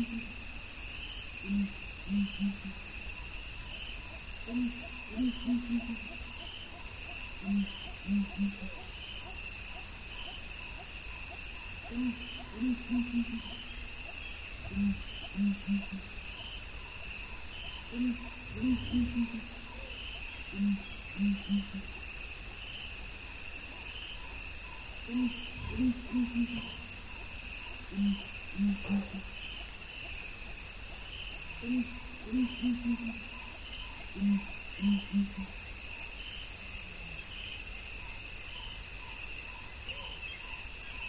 Tsendze Rustic Campsite, Kruger Park, South Africa - Dawn Chorus
First sounds of day. Ground Hornbills, Hippos and much more. EM172's on a Jecklin Disc to SD702